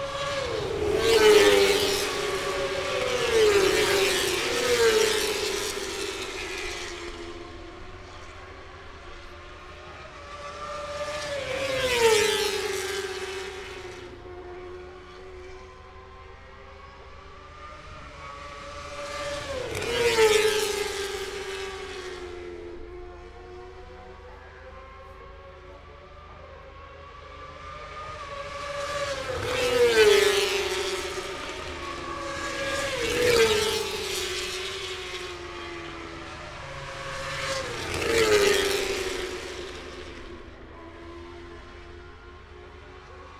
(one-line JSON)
{"title": "Lillingstone Dayrell with Luffield Abbey, UK - British Motorcycle Grand Prix 2016 ... moto two ...", "date": "2016-09-02 10:50:00", "description": "moto two ... free practice one ... International Pit Straight ... Silverstone ... open lavalier mics on T bar ...", "latitude": "52.07", "longitude": "-1.02", "altitude": "149", "timezone": "Europe/London"}